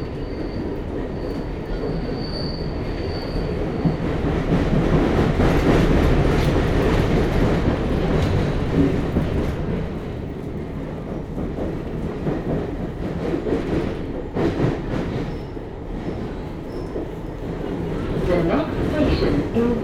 London, subway ride on the Hammersmith&City line from Aldgate East to Kings Cross